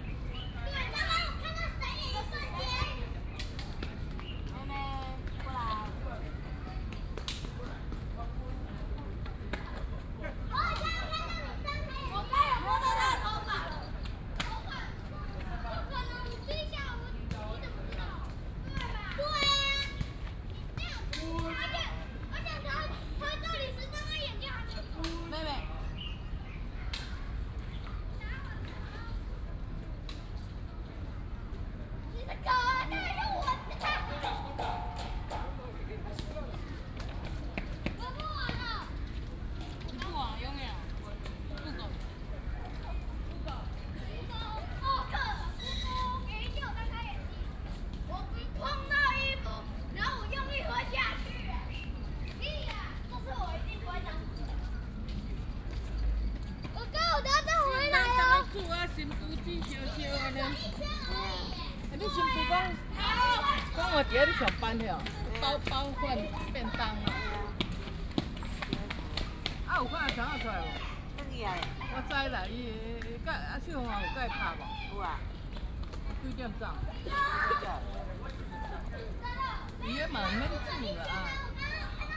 石雕公園, 板橋區, New Taipei City - Many elderly and children
Many elderly and children, in the Park